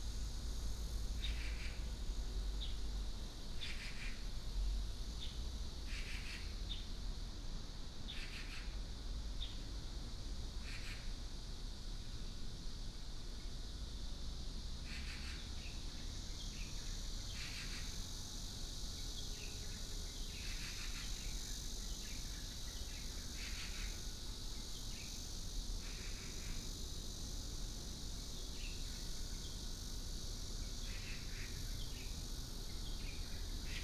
國防砲陣地運動公園, Dayuan Dist. - Newly renovated park
in the Park, Birds sound, Cicada cry, traffic sound, The plane flew through
Taoyuan City, Taiwan, 26 July, 10:52